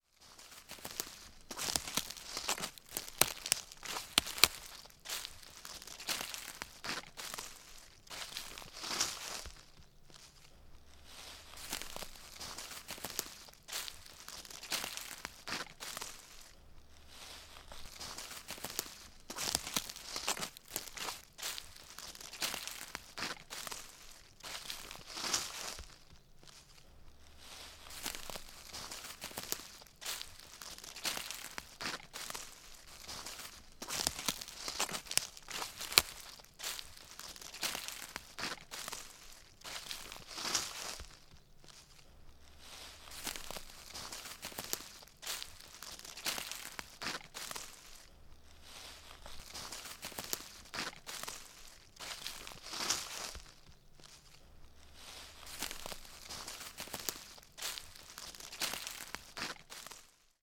Culliford Tree Barrows, Dorset, UK - walking in leaves
Part of the Sounds of the Neolithic SDRLP project funded by The Heritage Lottery Fund and WDDC.